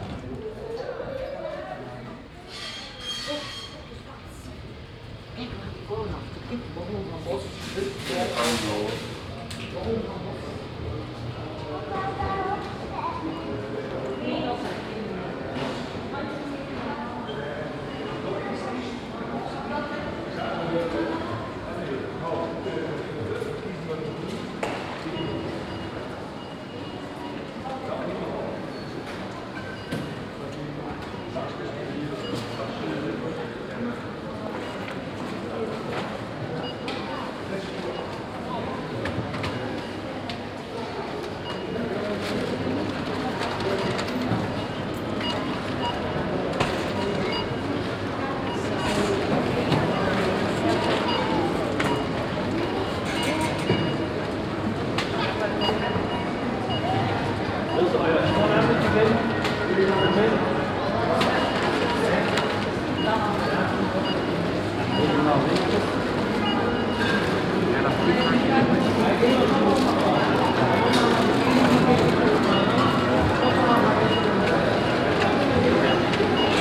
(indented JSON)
{
  "title": "Schmett, Ulflingen, Luxemburg - Huldange, shopping mall",
  "date": "2012-08-06 16:15:00",
  "description": "In einem Shopping Center am Dreiländereck zu Belgien und Deutschland. Der Klang von Menschenstimmen, Einkaufswagen und Supermarktmusik im Piepen der elektronischen Kassenanlagen.\nInside a shopping mall at the border corner to Belgium and Germany. The sound of human voices, trolleys and supermarkt music in the peeping of the electronic cash tills.",
  "latitude": "50.18",
  "longitude": "6.02",
  "altitude": "542",
  "timezone": "Europe/Luxembourg"
}